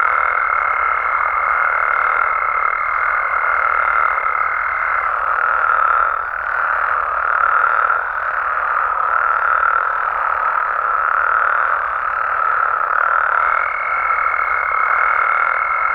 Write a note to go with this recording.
During the night close to a pound in the small community of Lavaderos (Desert of San Luis Potosi, Mexico), some toads and frogs are singing, happy to had some rain during the day.... after a few months very dry. Recorded by a AB setup with 2 B&K 4006 Microphones, On a Sound Devices 633 recorder, Sound Ref MXF190620T15, GPS 23.592193 -101.114010, Recorded during the project "Desert's Light" by Félix Blume & Pierre Costard in June 2019